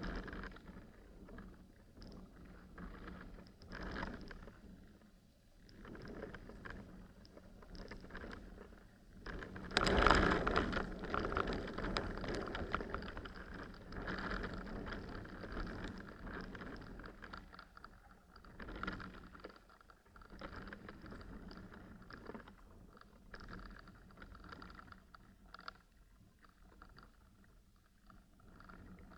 Lithuania, Lukniai, a branch in a wind
contact microphone on a fallen branch just near small village cemetery